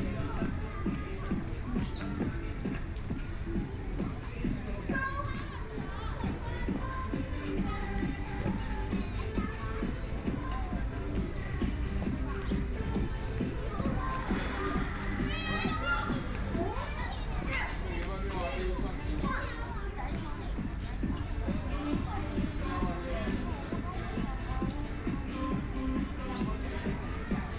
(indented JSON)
{
  "title": "碧湖公園, Neihu District - The park at night",
  "date": "2014-03-19 20:20:00",
  "description": "Many women are doing sports\nBinaural recordings",
  "latitude": "25.08",
  "longitude": "121.59",
  "altitude": "17",
  "timezone": "Asia/Taipei"
}